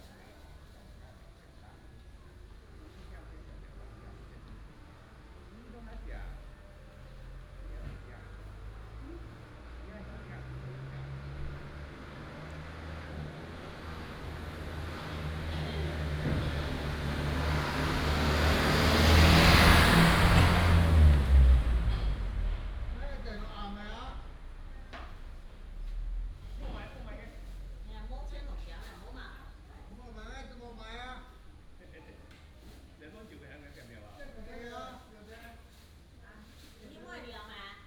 Small village, Small village market area, traffic sound, Binaural recordings, Sony PCM D100+ Soundman OKM II